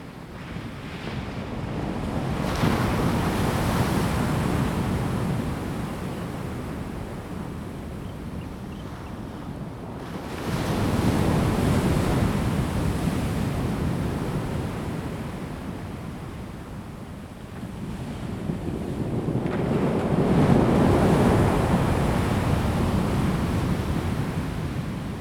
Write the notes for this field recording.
Chicken crowing, Bird cry, Sound of the traffic, Sound of the waves, Rolling stones, Zoom H2n MS+XY